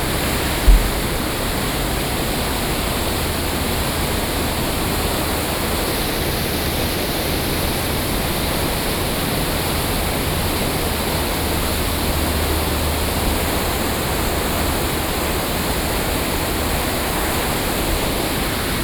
Wanli Dist. 萬里區, New Taipei City - Drainage channel
Drainage channels of the nuclear power plant, Sony PCM D50 + Soundman OKM II